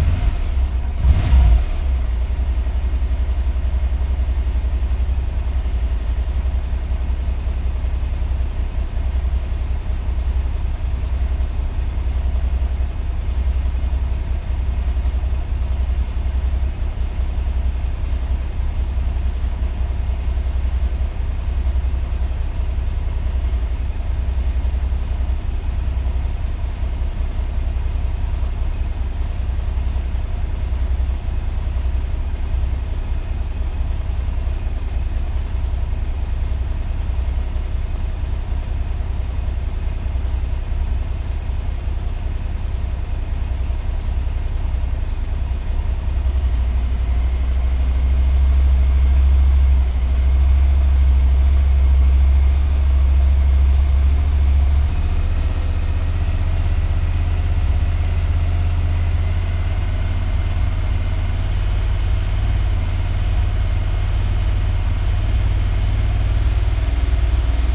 motor bike start up and run ... lavalier mics ... 700cc parallel twin ... 270 degrees firing order ...
Helperthorpe, Malton, UK